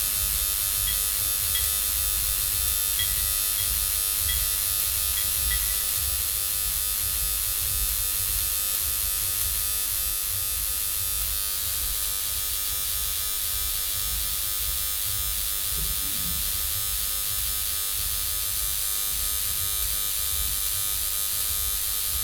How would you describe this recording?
soundmap nrw: social ambiences/ listen to the people - in & outdoor nearfield recordings